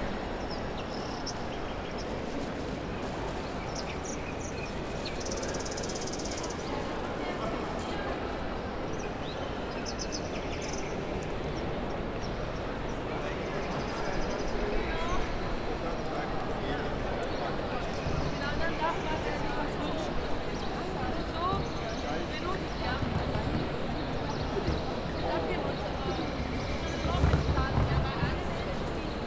ambience of the galleria, tourists, a chirping bird i couldn locate, could be coming from a speaker
Milan, Italy